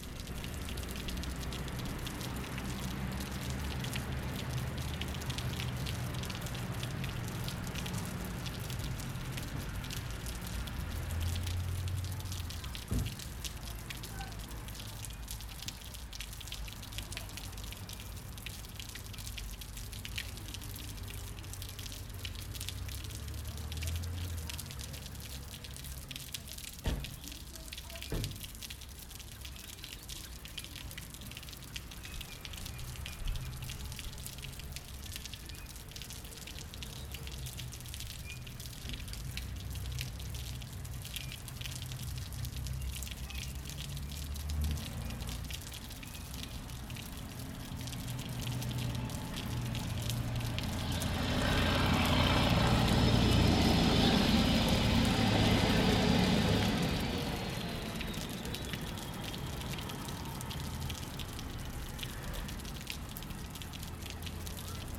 Bleialf, Deutschland - Springbrunnen in Bleialf / Fountain in Bleialf
Er besteht aus zwei Hälften eines Findlings. Aus der oberen Hälfte tropft Wasser auf die untere. Dies soll an den mittelalterlichen Bergbau in der Region erinnern. Zusätzlich ein paar Busse, die am Platz vorbeifahren, eine Autotür, Vögel./
It consists of two halves of a boulder. Water dripping from the upper half to the lower. This is reminiscent of the medieval mining in the region. In addition, a few buses that past the square, a car door, birds.